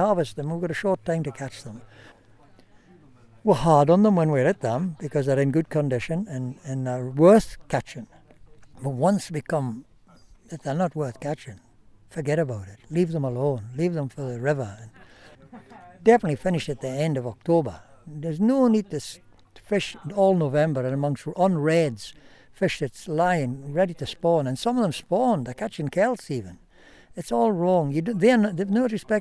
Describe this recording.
Field interview with George Purvis, skipper at Paxton netting station, one of the last two netting stations on the River Tweed in the Scottish Borders. George talks about reading the river, the fish and the wind, and his many years' experience of net fishing.